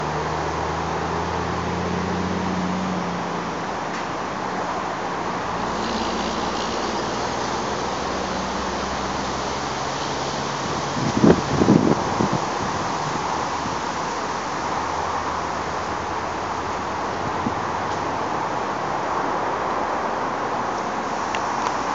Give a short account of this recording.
Sounds of passing traffic from footpath inside roundabout at junction 29 of the M1 motorway